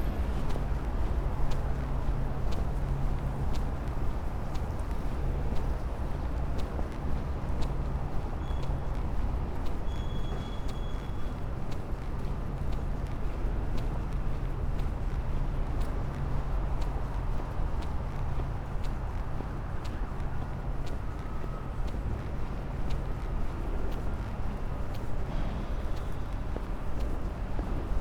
Pl. Wiosny Ludów, Poznan, Polska - work walk 1
walking along popular promenade in Poznan during early hours. only a handful of people walk by, garbage man do their job, almost all shops are closed, pretty quiet and not much activity (roland r-07)
województwo wielkopolskie, Polska, October 2020